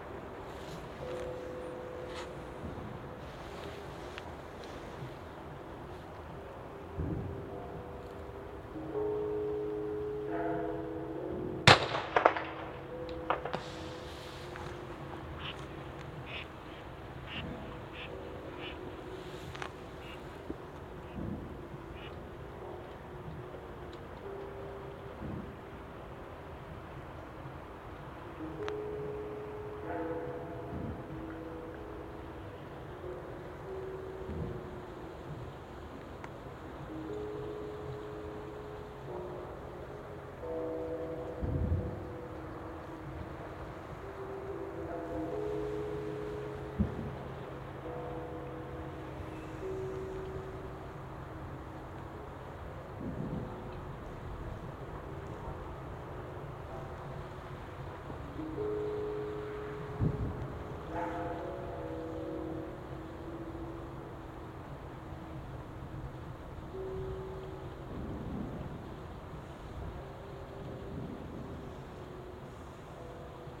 New Years Eve in Tsuji, Rittō-shi, Shiga-ken, Japan - New Year 2018 Temple Bells and Fireworks
New Year's eve recording in a field in Japan. We can hear temple bells, traffic, trains, and other sounds. At midnight nearby fireworks and a neighbor's firecracker announce the new year. Recorded with an Audio-Technica BP4025 stereo microphone and a Tascam DR-70D recorder mounted on a tripod.